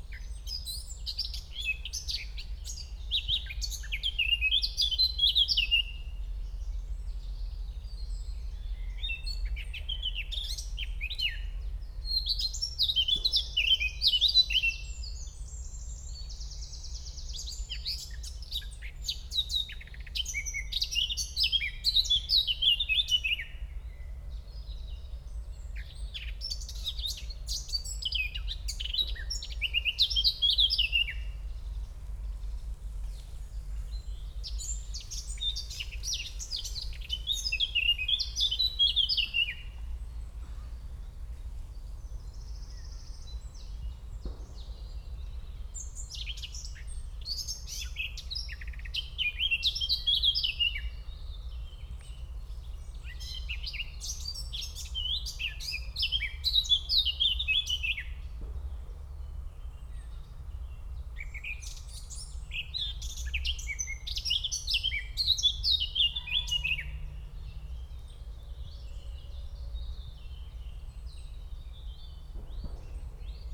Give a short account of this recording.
at the edge of park Pszczelnik, Eurasian black cap (Mönchsgrasmücke in german) in tree above me, quite unimpressed of my presence, (Sony PCM D50, DPA4060)